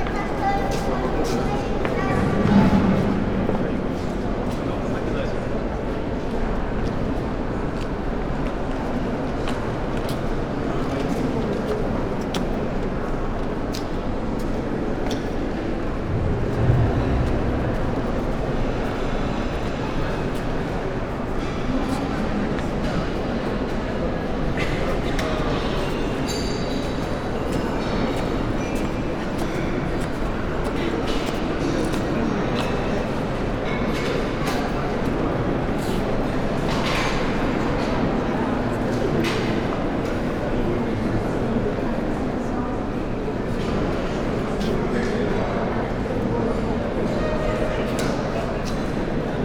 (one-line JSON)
{"title": "Kunsthistorisches Museum, Wien - foyer", "date": "2015-01-10 12:49:00", "description": "strong wind outside, audible inside, murmur of people, walking", "latitude": "48.20", "longitude": "16.36", "altitude": "193", "timezone": "Europe/Vienna"}